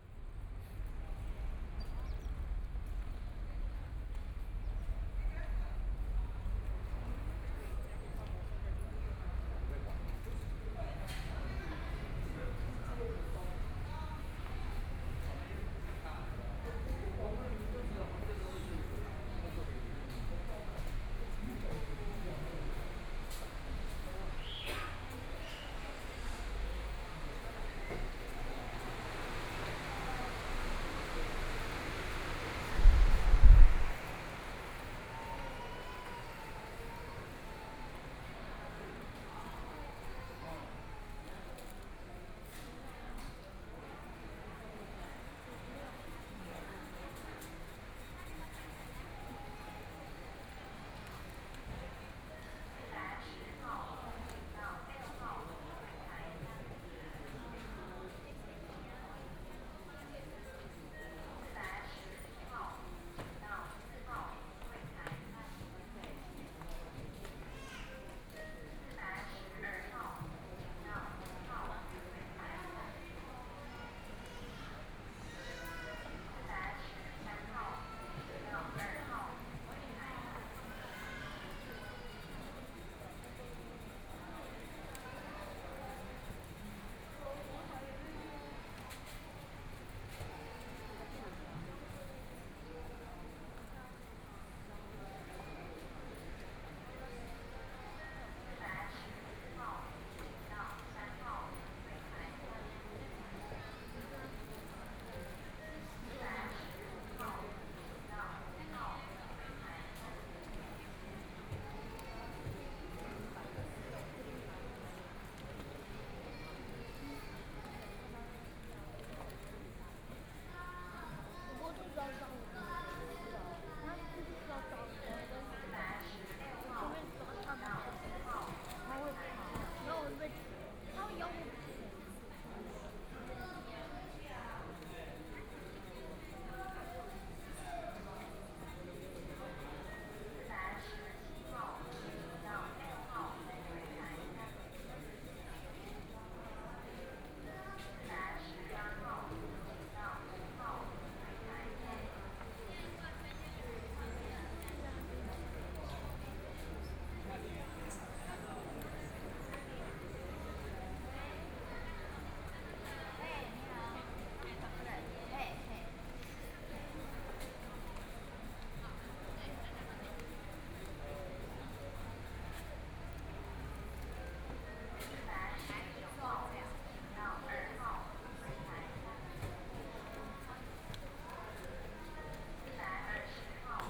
Buddhist Tzu Chi General Hospital, Taiwan - in the hospital
In the hospital lobby
Binaural recordings
Zoom H4n+ Soundman OKM II + Rode NT4
Hualian City, Hualien County, Taiwan, 24 February 2014